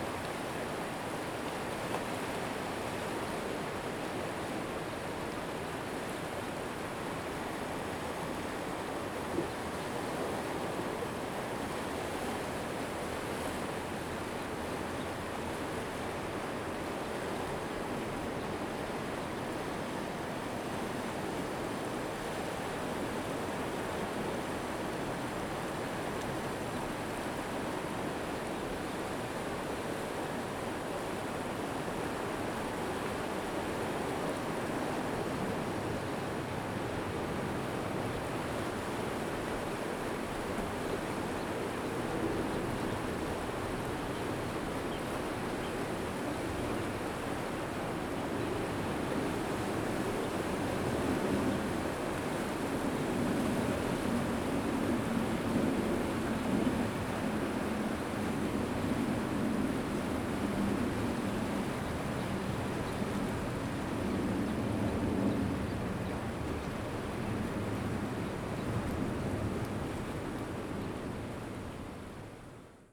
溪口, 淡水區, New Taipei City - On the coast
On the coast, Aircraft flying through, Sound of the waves
Zoom H2n MS+XY + H6 XY
New Taipei City, Tamsui District, 15 April 2016